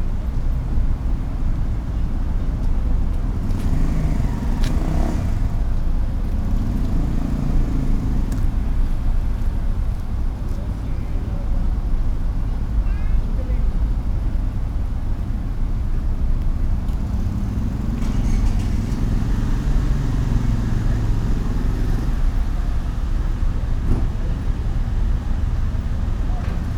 I made this recording on September 9th, 2021, at 8:17 p.m.
I used a Tascam DR-05X with its built-in microphones and a Tascam WS-11 windshield.
Original Recording:
Type: Stereo
En el Parque de Panorama.
Esta grabación la hice el 9 de septiembre de 2021 a las 20:17 horas.